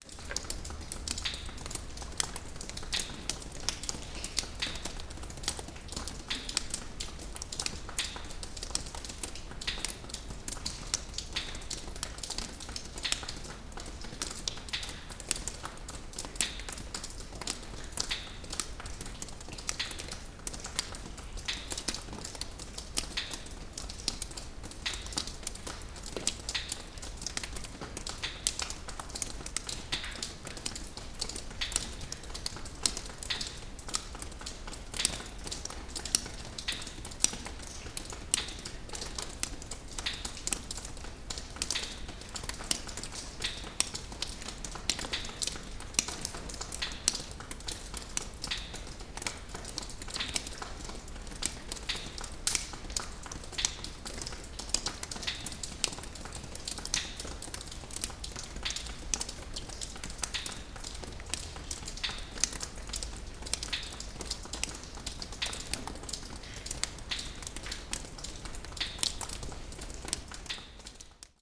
Inside one of the caves created by miners of the gray stone.